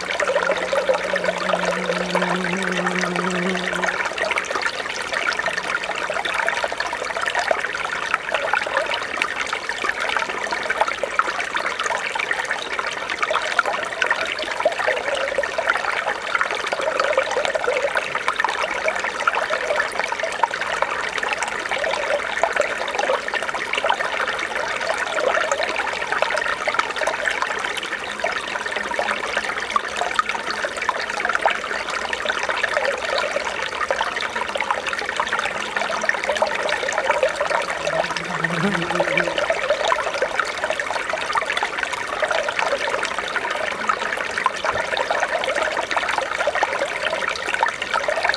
I placed 2 Beyer lavaliers almost in the water of this tiny stream in a peaceful woodland. I cheated a little by placing a Foxes Glacier Mint by the mics to attract the bees. Recorded on a Mix Pre 3.
England, United Kingdom, 4 July 2019